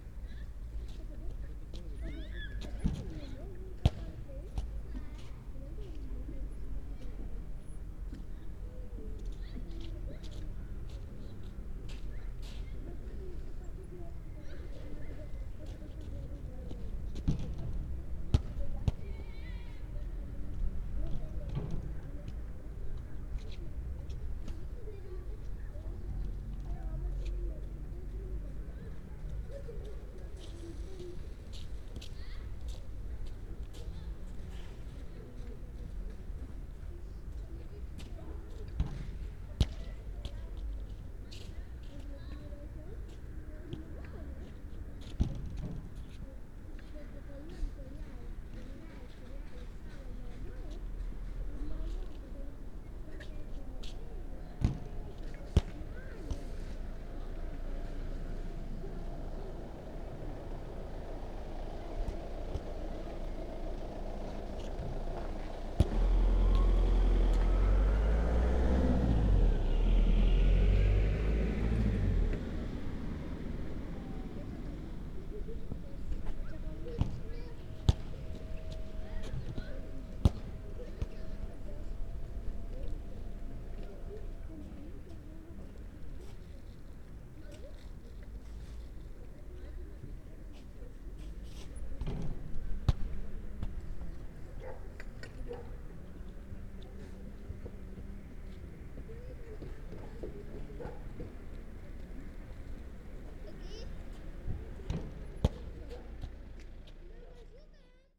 Molėtai, Lithuania, at the lake
a soundscape at the frozen lake